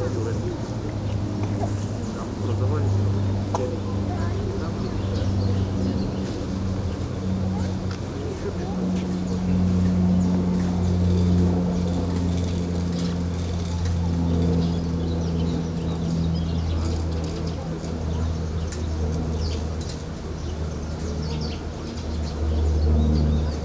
Park near Monastery, Dzerginsk
Dzerginsk, park near monastery